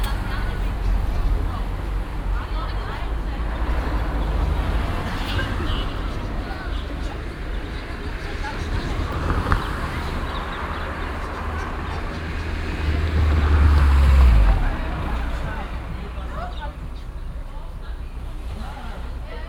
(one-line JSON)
{"title": "refrath, vuerfels, bahnuebergang", "description": "frueher morgen, verkehr und passanten am bahnübergang, einfahrt der bahn, schliessen der schranke, vorbeifahrt bahn, öffnen der schranke, abfliessen des wartenden verkehrs, schulkinder\nsoundmap nrw - social ambiences - sound in public spaces - in & outdoor nearfield recordings", "latitude": "50.95", "longitude": "7.11", "altitude": "69", "timezone": "GMT+1"}